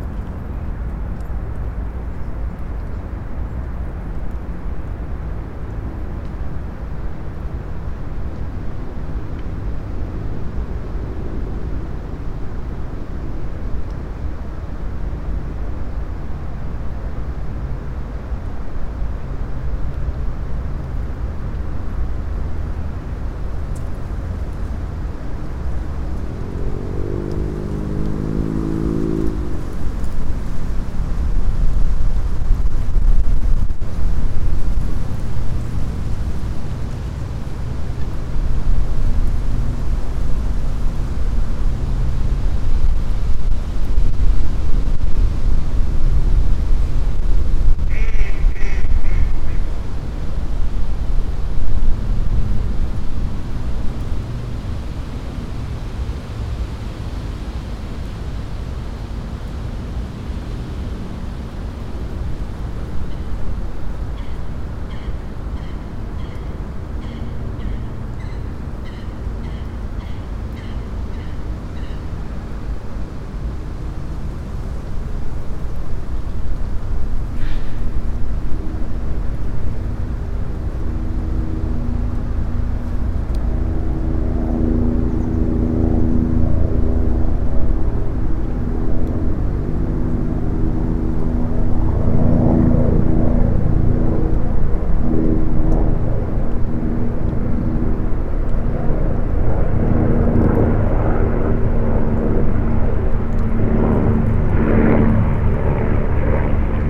Chemin de Ceinture du Lac Inférieur, Paris, France - (362) Soundscape of Bois de Boulonge
Recording near the water - ducks, dogs, people running.
ORTF recording made with Sony D100